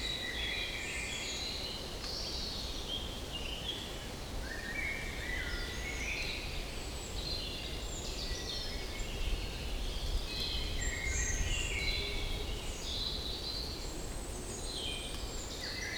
Unnamed Road, Šempas, Slovenia - Trnovo forest - Krnica Lokve
Birds singing in the forest.
Recorded with Sounddevices MixPre3 II and LOM Uši Pro.